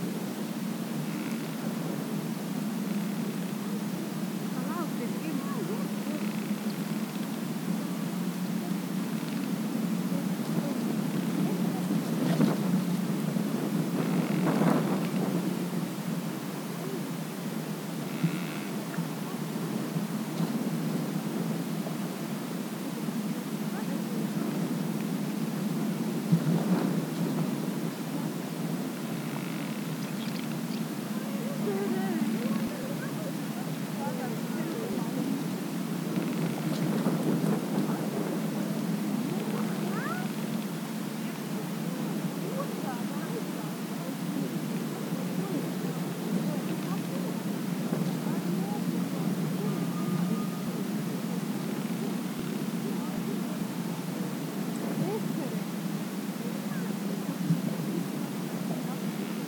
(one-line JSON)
{"title": "Neringos sav., Lithuania - Sand Dunes", "date": "2016-07-26 18:37:00", "description": "Recordist: Saso Puckovski\nDescription: Sunny day at the peak of the dune, about 40m from the Sun Dial. Tourists, wind and bush crackling noises. Recorded with ZOOM H2N Handy Recorder.", "latitude": "55.29", "longitude": "20.99", "altitude": "40", "timezone": "Europe/Vilnius"}